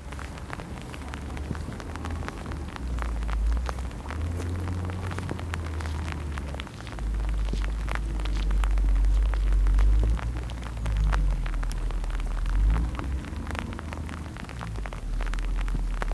Weißwasser, Germany - Distant mine work in the hardening rain
Rainy grey misty weather at dusk. But during this recording a small touch of magic – the sun suddenly appeared as small disc of misty orange in the gloom. Strangely uplifting and very atmospheric in the spattering rain.